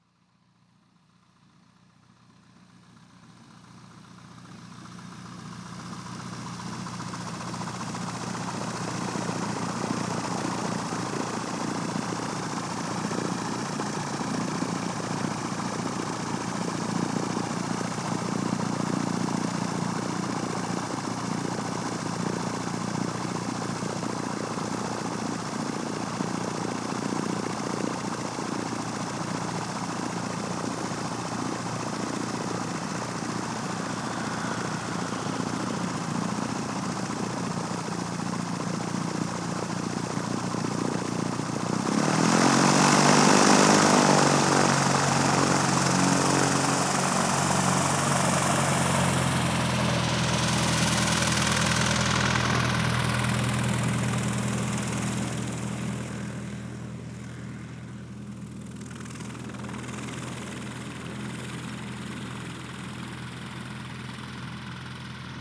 Sports airplane starting and landing
Kirchheim, Germany, 19 March 2014